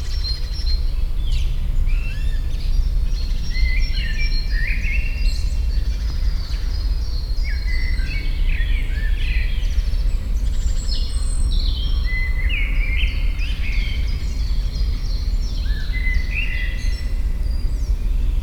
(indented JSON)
{
  "title": "Gebrüder-Funke-Weg, Hamm, Germany - morning spring birds Heessener Wald",
  "date": "2015-04-08 08:56:00",
  "description": "hum of the morning rush hour still floating around the forest in seasonal mix with bird song",
  "latitude": "51.71",
  "longitude": "7.85",
  "altitude": "89",
  "timezone": "Europe/Berlin"
}